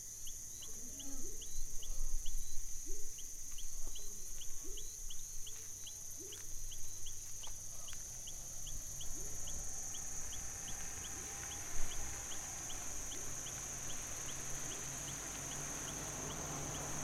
Cachoeira, BA, Brasil - Noite na Lagoa Encantada
Trabalho realizado para a disciplina de Sonorização I - Marina Mapurunga - UFRB.
Flora Braga